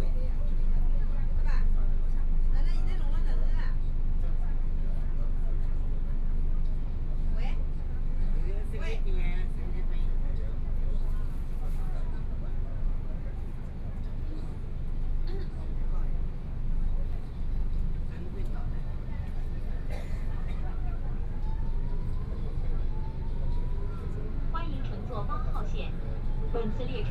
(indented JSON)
{
  "title": "Yangpu District, Shanghai - Line 8 (Shanghai Metro)",
  "date": "2013-11-26 11:15:00",
  "description": "from Siping Road station to Huangxing Road station, erhu, Binaural recording, Zoom H6+ Soundman OKM II",
  "latitude": "31.28",
  "longitude": "121.52",
  "altitude": "8",
  "timezone": "Asia/Shanghai"
}